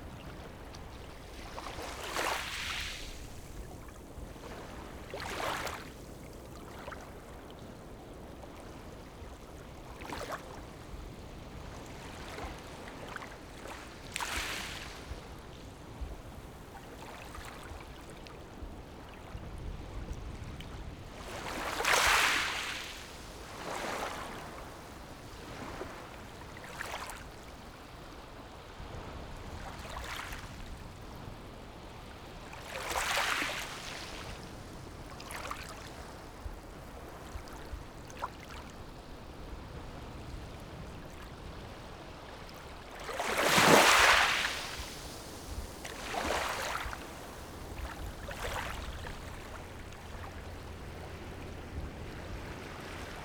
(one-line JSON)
{"title": "后沃海濱公園, Beigan Township - Waves and tides", "date": "2014-10-15 14:01:00", "description": "Waves and tides, Small beach, Tide\nZoom H6 +Rode NT4", "latitude": "26.22", "longitude": "120.00", "altitude": "1", "timezone": "Asia/Taipei"}